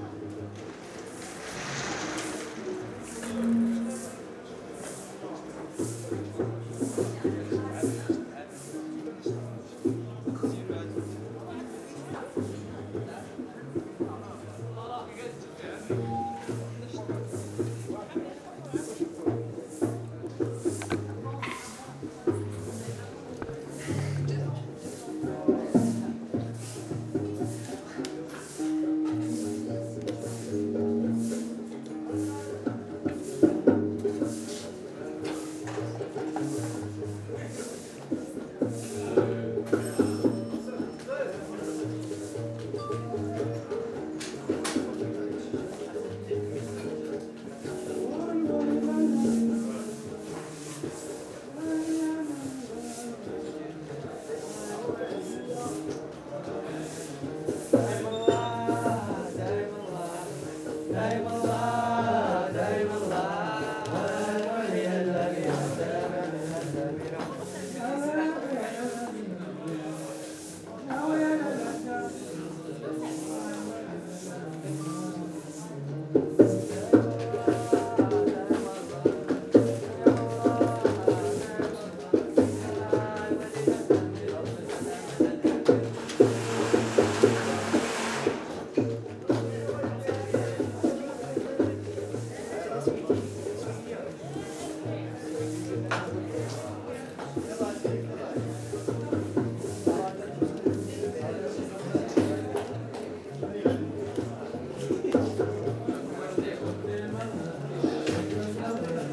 Rahba Kedima, Marrakech, Marokko - market cleanup, Gnawa sound
sounds of the outside market cleanup, only a few people are on the streets of the Mediana. the 12h radio peformance curated by artists Berit Schuck and Julia Tieke reaches its last hour.
(Olympus LS5)